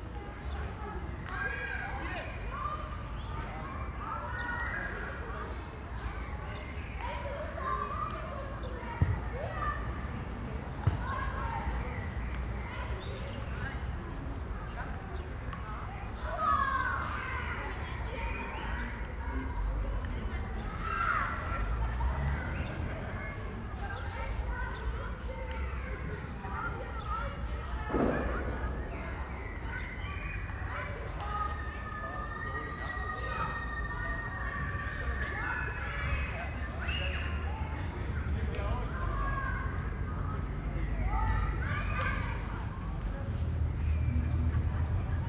berlin, wildenbruchplatz
sunday early summer evening, ambience
Berlin, Germany, 26 June